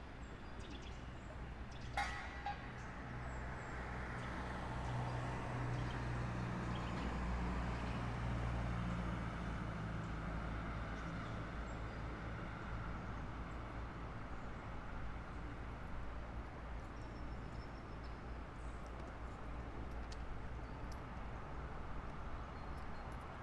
Avenue du Temple, Lausanne, Suisse - ambiance extérieure
rumeur urbaine depuis fenêtre captée par couple Schoeps